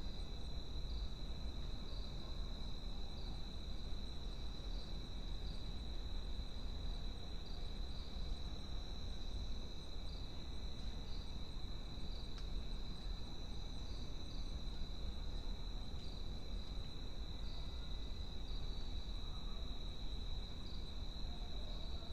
22:30 Film and Television Institute, Pune, India - back garden ambience
operating artist: Sukanta Majumdar
Pune District, Maharashtra, India, 25 February 2022